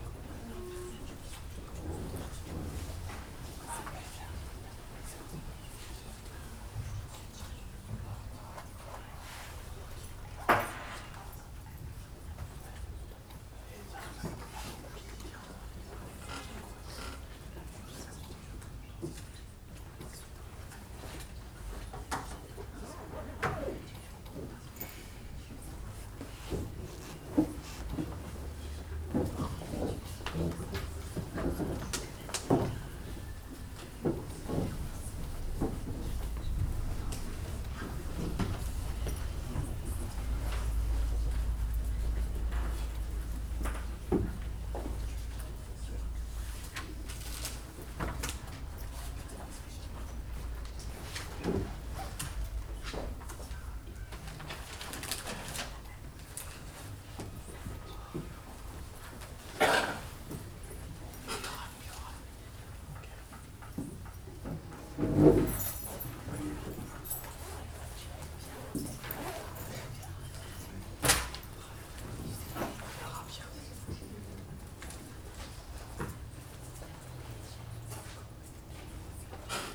Place de la Légion dHonneur, Saint-Denis, France - Médiatheque Centre Ville - Espace Travaille
The working space, mostly filled with young students working and whispering very respectfully (recorded using the internal microphones of a Tascam DR40).